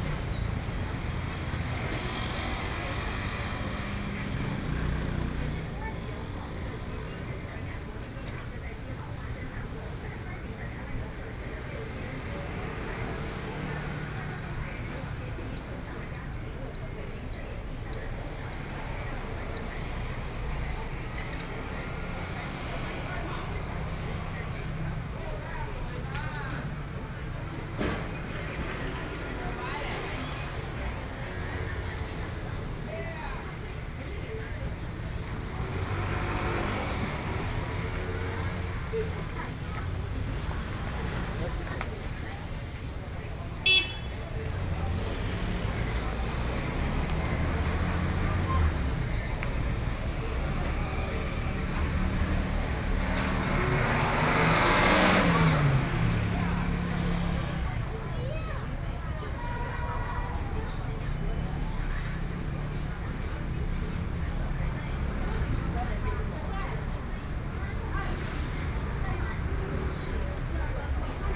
Puxin - Entrance to the market
Noon, the streets of the Corner, traffic noise, Hours markets coming to an end, Tidying up, Sony PCM D50+ Soundman OKM II